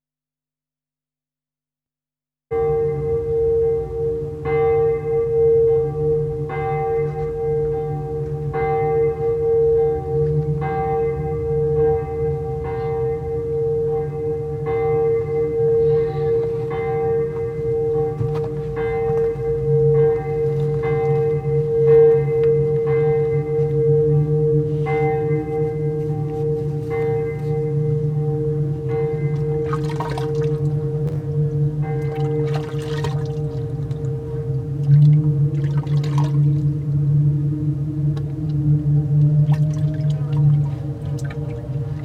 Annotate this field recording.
sound of church bell and washing resonated in metal container. Sony MS mic, Dat recorder